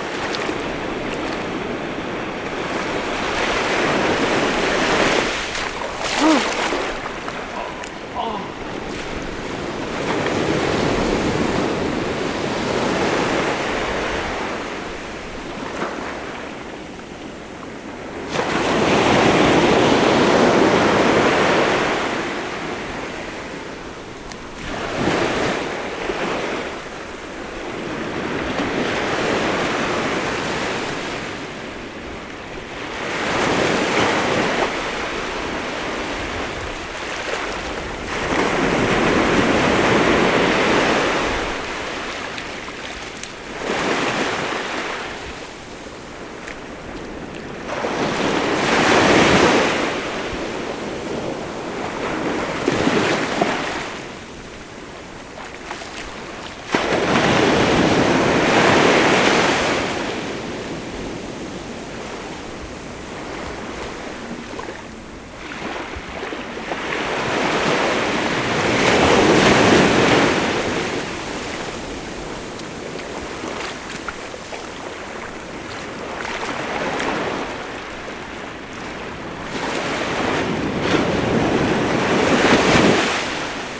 Alghero Sassari, Italy - A Walk Along a Stormy Beach
I recorded this while walking in the water on a beach in Alghero. As you can hear in the first part of the recording, the water was a little cold
8 May, ~04:00